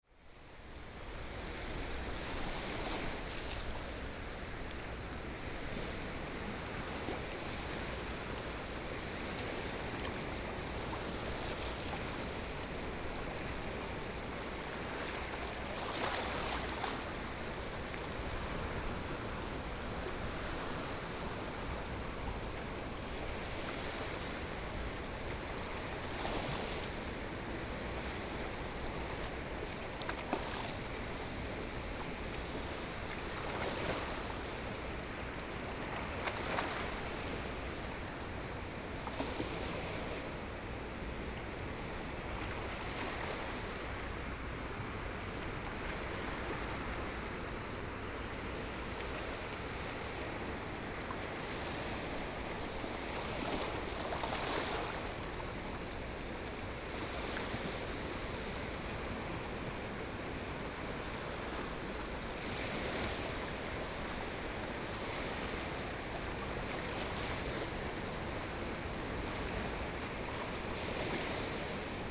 Streams to the sea, Sound of the waves
Sony PCM D50+ Soundman OKM II
Toucheng Township, Yilan County, Taiwan, 26 July, ~17:00